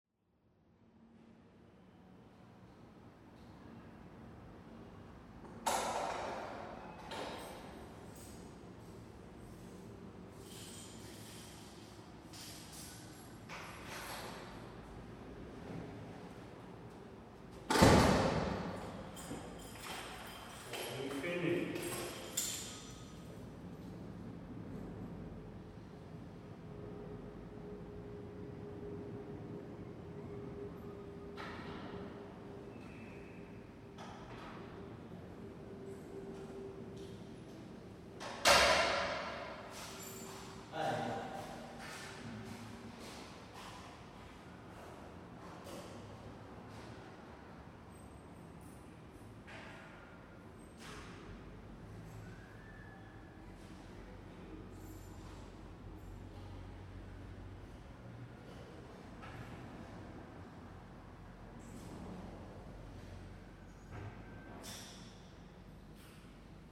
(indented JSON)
{
  "title": "Uk - Int Ambience 2 Weyland House corridor – Robsart Street SW9 London",
  "date": "2010-08-20 13:06:00",
  "description": "Urban landscape. A high tower coucil block, ovepopulated.\nRecording interior wildtracks trying to fit the busy buildings life.",
  "latitude": "51.47",
  "longitude": "-0.11",
  "timezone": "Europe/London"
}